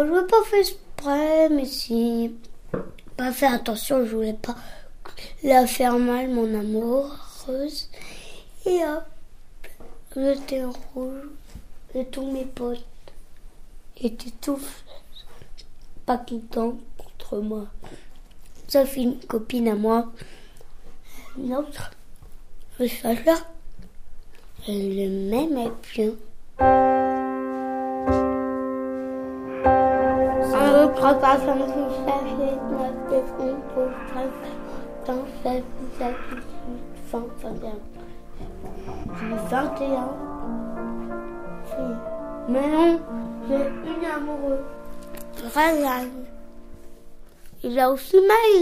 Neuflize - Le petit-déjeuner de Félix
Félix prend son petit-déjeuner et se raconte...